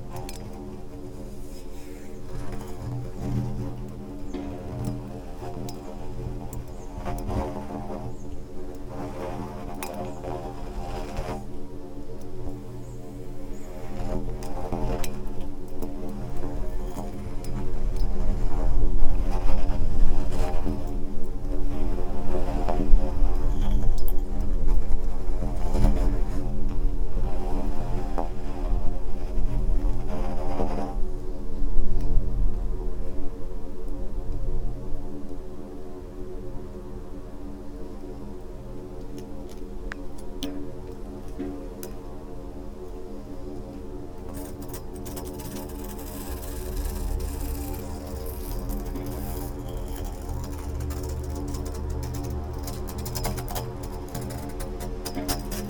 quarry, Marušići, Croatia - void voices - stony chambers of exploitation - borehole
air, wind, sand and tiny stones, broken reflector, leaves, flies, birds, breath and ... voices of a borehole